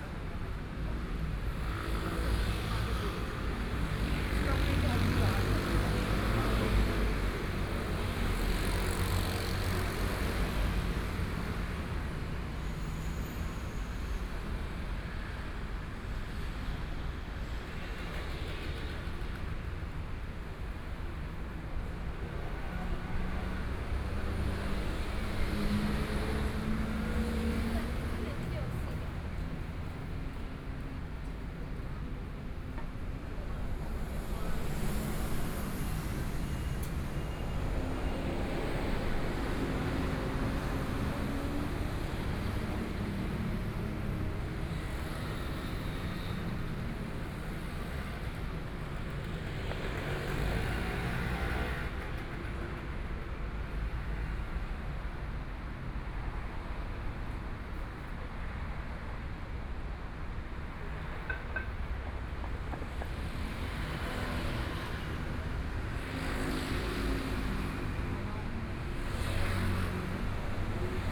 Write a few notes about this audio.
At the intersection, Traffic Sound, Binaural recordings, Zoom H4n+ Soundman OKM II